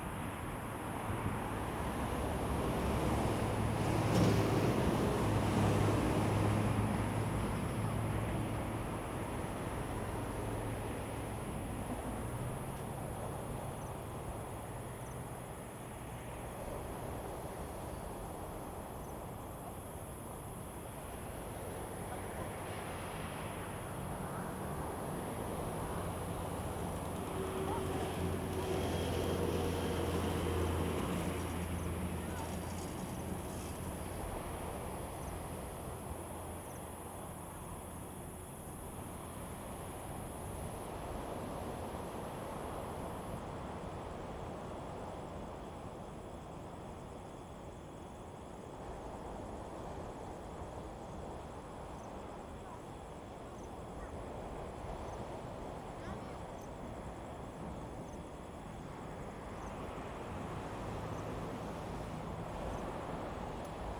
華源村, Taimali Township - the waves
Traffic Sound, Sound of the waves
Zoom H2n MS +XY
Taitung County, Taiwan, 5 September 2014